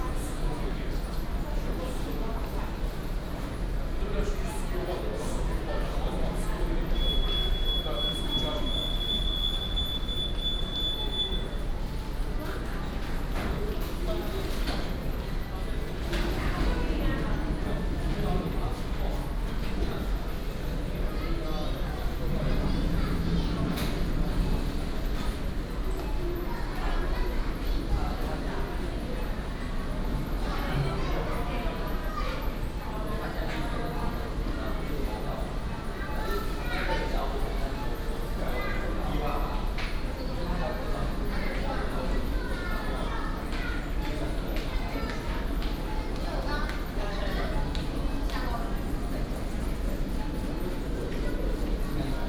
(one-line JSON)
{"title": "Carrefour TanXin Store, New Taipei City - In supermarkets", "date": "2016-03-11 21:19:00", "description": "In supermarkets\nBinaural recordings\nSony PCM D100+ Soundman OKM II", "latitude": "25.19", "longitude": "121.44", "altitude": "32", "timezone": "Asia/Taipei"}